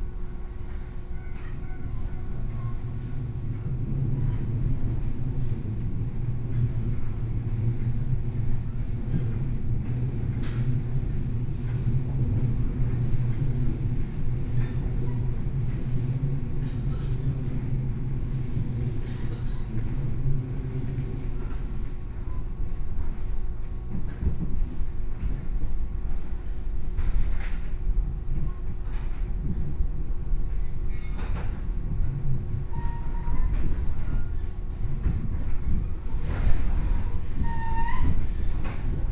Su'ao Township, Yilan County - Local Train
Yilan Line, Local Train, from Su'ao Station to Su'aoxin Station, Binaural recordings, Zoom H4n+ Soundman OKM II